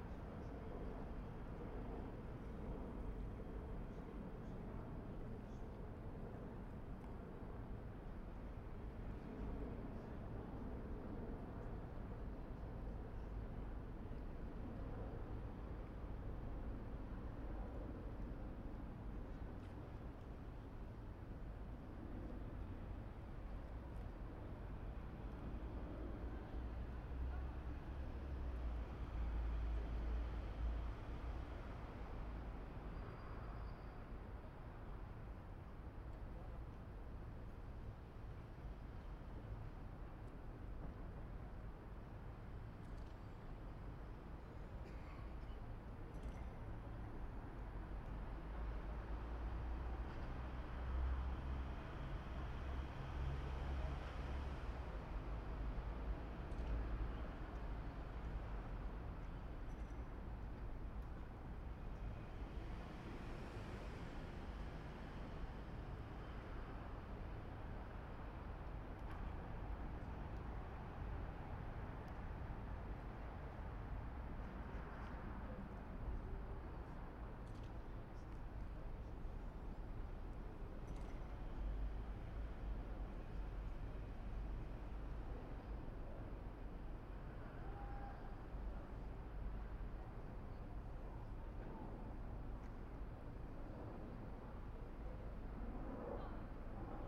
Recording street ambience in Chelsea College of Art parade ground using ambisonic microphone by reynolds microphones